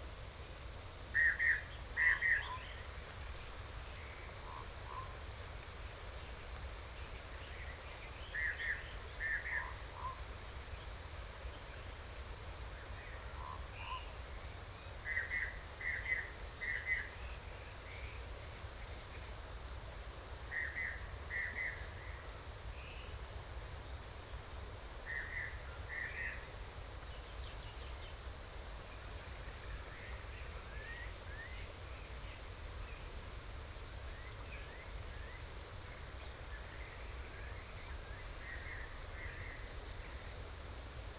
TaoMi, Nantou County 台灣 - Bird calls
Bird calls, Cicadas sound